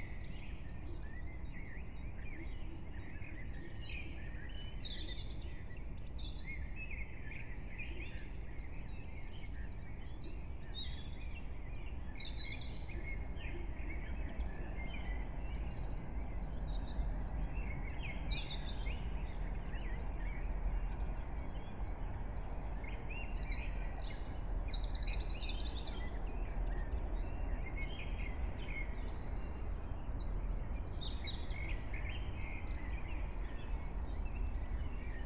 05:00 Brno, Lužánky - early spring morning, park ambience
(remote microphone: AOM5024HDR | RasPi2 /w IQAudio Codec+)